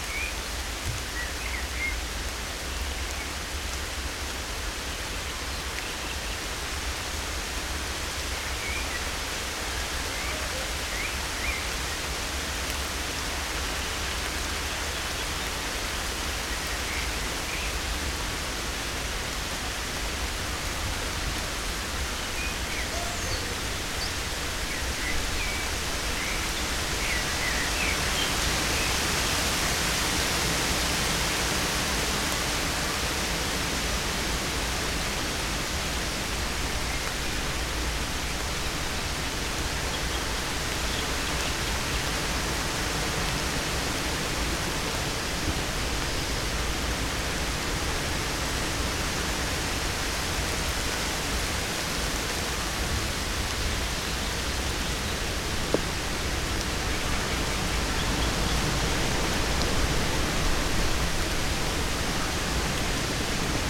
Domaine du Buttet, Le Bourget-du-Lac, France - Sous l'averse
A l'abri sous les feuillages, grosse averse, quelques coups de tonnerre.
France métropolitaine, France, 20 May